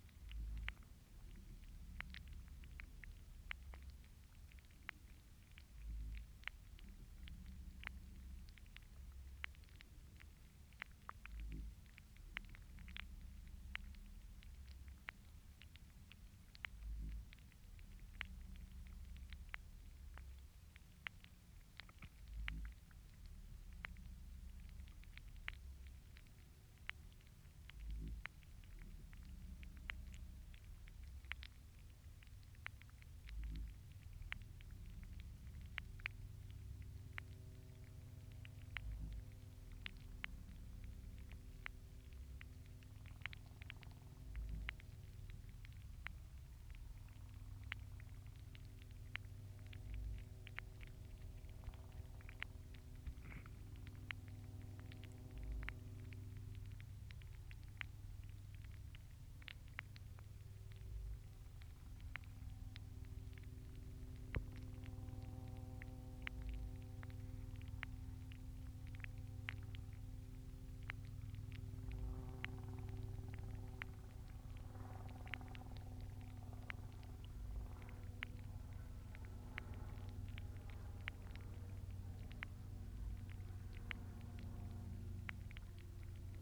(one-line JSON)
{"title": "Llanchidian Salt Marsh", "description": "Hydrophone recording of a single stem plant growing in the water.", "latitude": "51.63", "longitude": "-4.21", "altitude": "5", "timezone": "Europe/Berlin"}